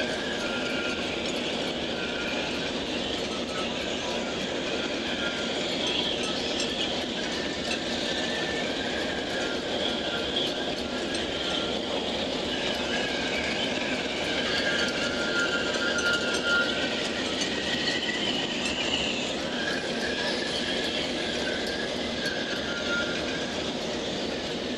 Granville, France - port du hérel/tempête
a lot of wind
boats and wires as heolian harp
December 2013